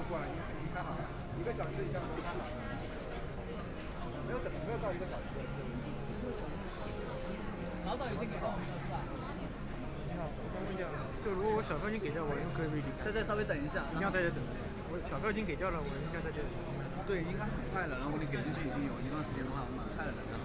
Nanjin Road, Shanghai - in the Apple Store
in the Apple Store, Binaural recording, Zoom H6+ Soundman OKM II